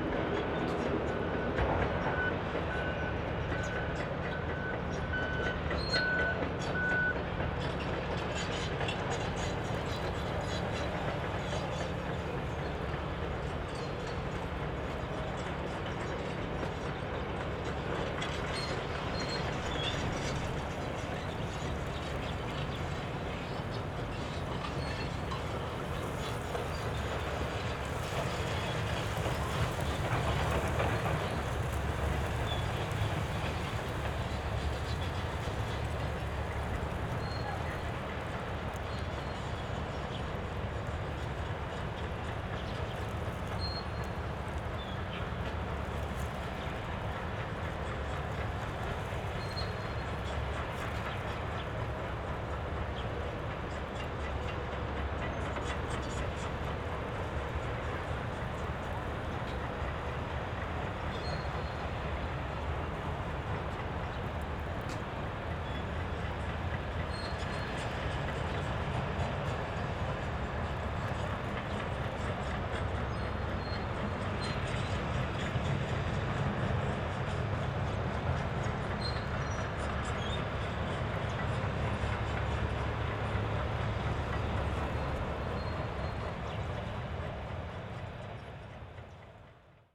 allotment, Neukölln, Berlin - demolition of Magna factory
sounds of demolition of former CD factory Magna, from a distance. the factory lies within the route of planned A100 motorway.
(SD702, AT BP4025)